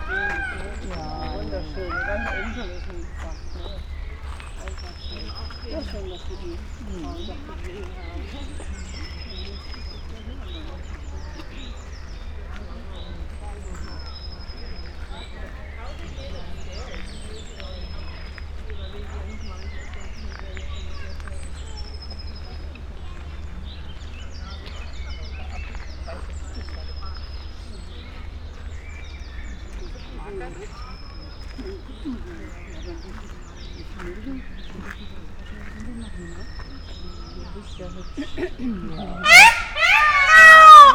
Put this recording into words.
spoken words, peacock screams, wind, bird